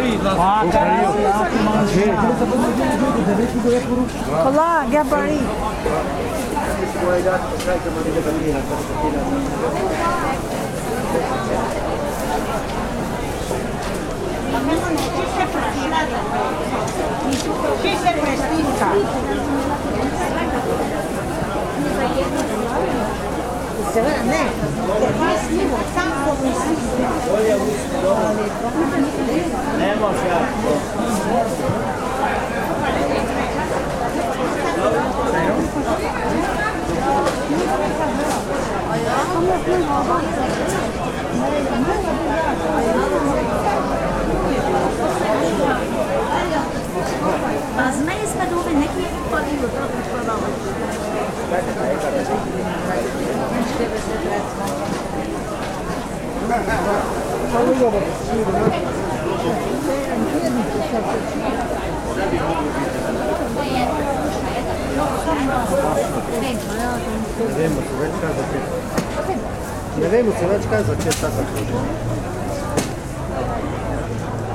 Maribor, Vodnikov Trg, market - market walk before noon
slow walk over crowded market, flood of plastic bags